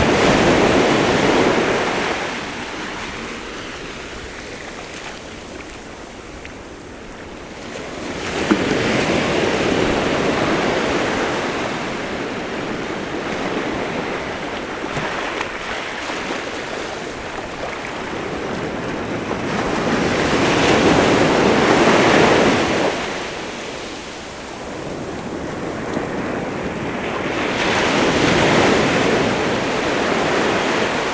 Alghero Sassari, Italy - A Walk Along a Stormy Beach
I recorded this while walking in the water on a beach in Alghero. As you can hear in the first part of the recording, the water was a little cold
May 2005